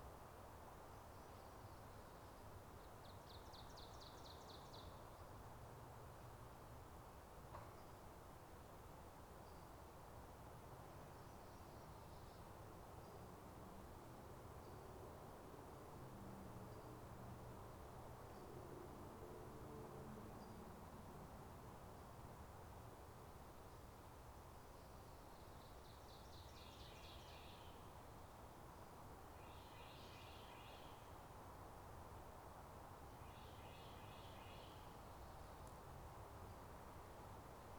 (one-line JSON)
{"title": "Ozone National Forest - Ozone Recreation Area & Campground", "date": "2022-04-15 09:05:00", "description": "Sounds of the Ozone Recreation Area & Campground inside the Ozone National Forest. The wind started to pickup so the sound of the wind in the trees can be heard.", "latitude": "35.67", "longitude": "-93.45", "altitude": "580", "timezone": "America/Chicago"}